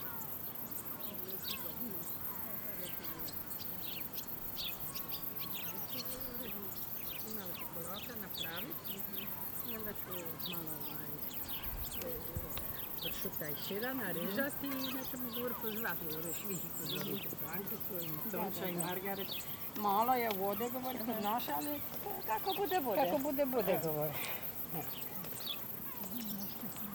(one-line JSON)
{"title": "sheep crickets birds seagull ppl", "latitude": "44.43", "longitude": "15.06", "altitude": "-1", "timezone": "Europe/Berlin"}